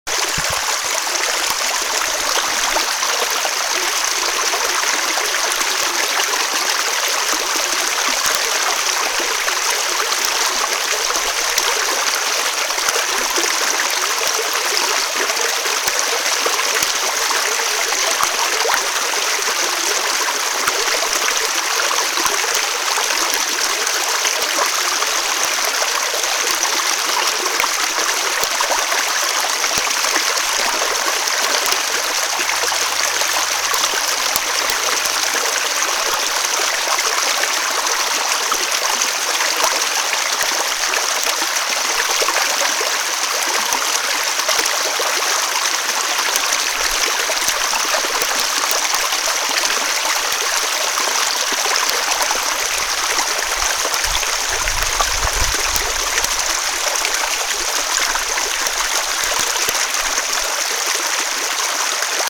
Kronach, Deutschland - Dobergrundbach
Der Dobersgrundbach Frühjahr 2013